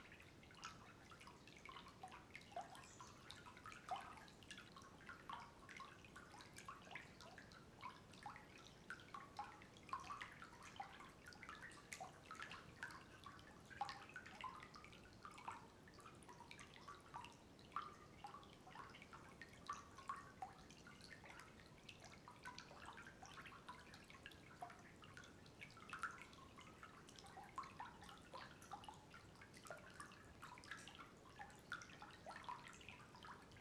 Lithuania, country, under the bridge
as waters flow under the little bridge...